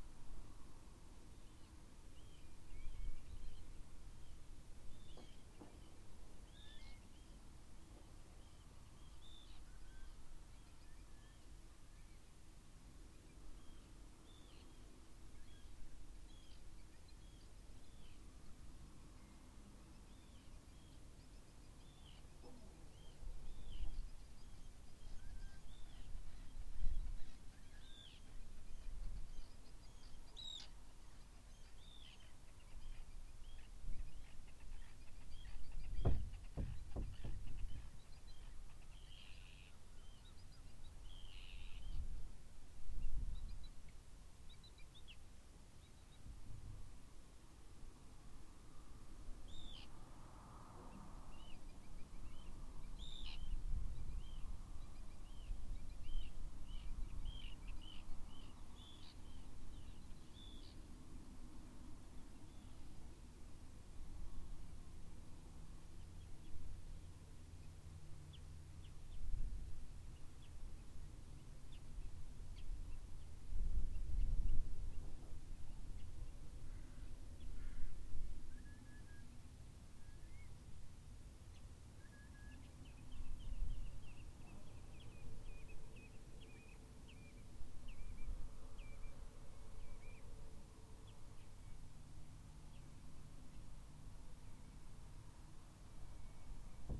Nesbister böd, Whiteness, Shetland, UK - Listening from the stone steps of the böd
This is the evening quiet outside the böd, as documented by the little on board microphones on the EDIROL R-09. The situation was very nice, some terns circling above, the heavy wooden doors of the böd gently thudding when stirred by the wind, some tiny insects browsing the rotting seaweed strewn around the bay, the sound of my steps receding into the long, pebbly curve of the beach, and distant baas from sheep and cries from geese occasionally entering into the mix. I loved the peace at Whiteness.